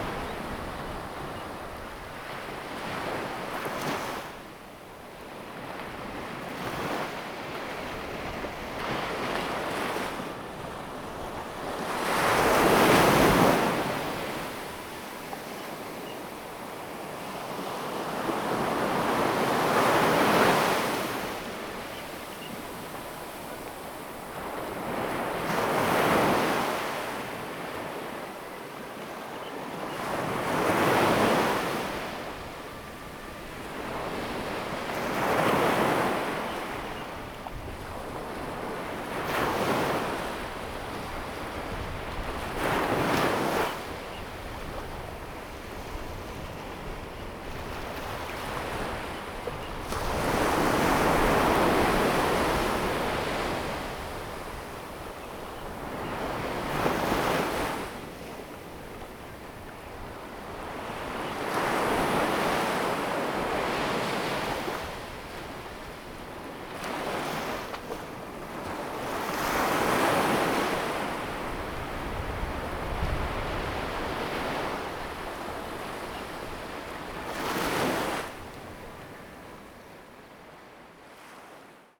屏鵝公路, Chuanfan Rd., Hengchun Township - At the beach
At the beach, Sound of the waves, Birds sound, traffic sound, Not far from temples
Zoom H2n MS+XY
Pingtung County, Taiwan, 23 April 2018, 06:24